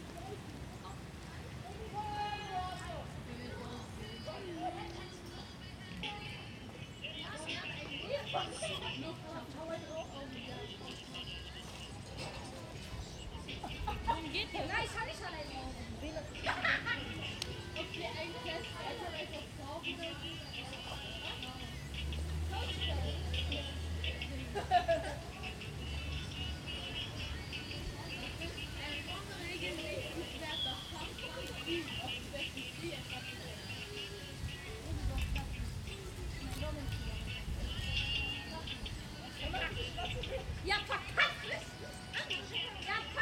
Wollankstraße, Soldiner Kiez, Berlin, Deutschland - Wollankstraße 96C, Berlin - Teenagers in the backyard, hanging around on a Sunday afternoon
Wollankstraße 96C, Berlin - Teenagers in the backyard, hanging around on a Sunday afternoon. Even if there is already a radio playing in the backyard, the teenagers play their own music via smartphone.
[I used Hi-MD-recorder Sony MZ-NH900 with external microphone Beyerdynamic MCE 82]
Wollankstraße 96C, Berlin - Teenager hängen an einem Sonntagnachmittag im Hinterhof herum. Auch wenn der Hof bereits von einem Radio beschallt wird, dudelt ein Smartphone parallel dazu.
[Aufgenommen mit Hi-MD-recorder Sony MZ-NH900 und externem Mikrophon Beyerdynamic MCE 82]